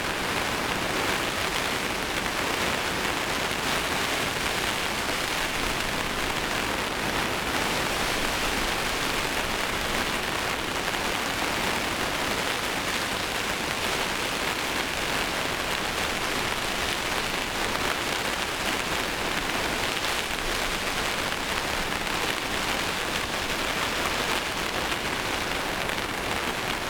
Chapel Fields, Helperthorpe, Malton, UK - inside poly tunnel ... outside stormy weather ...
inside poly tunnel ... outside stormy weather ... dpa 4060s to Zoom H5 ... mics clipped close to roof ...